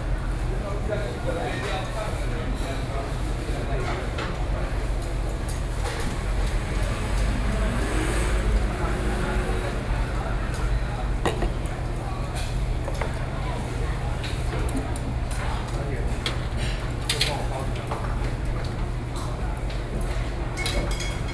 萬華夜市, Wanhua District, Taipei City - in the restaurant